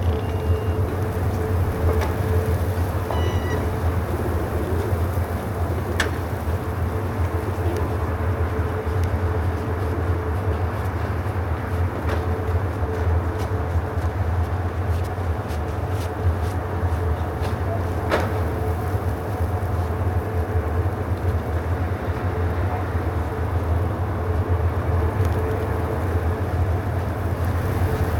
gorod Vorkuta, République des Komis, Russie - weather report
Strong wind and snow in Vorkuta.